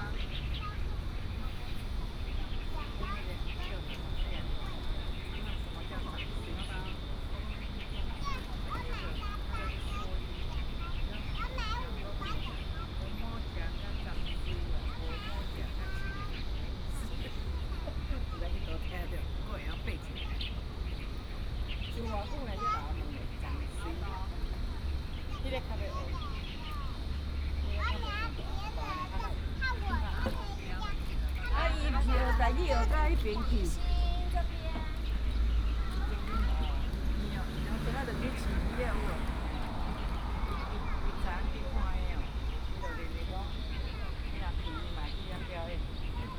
瑠公圳水源池, National Taiwan University - Bird sounds
Bird sounds, Ecological pool, In the university
Da’an District, Taipei City, Taiwan, February 2016